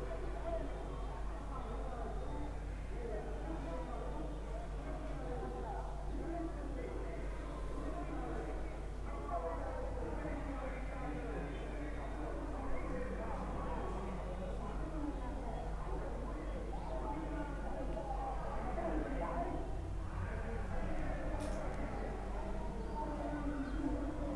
recorded in my hotel room - there were numerous political campaigns going on simultaneously in the town - the sound scape was fantastic!
recorded november 2007
Thanjavur, Tamil Nadu, India